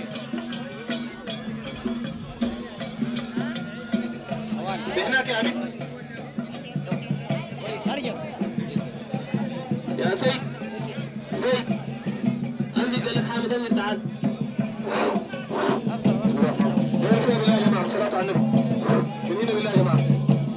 شارع الراشدين, Sudan - dhikr frag @ tomb sheikh Hamad an-Neel
Every friday dikhr at the tomb of sjeikh Hamad an-Neel in the outskirts of Omdourman. This is rec in 1987, before the orthodox took over control.
ولاية الخرطوم, السودان al-Sūdān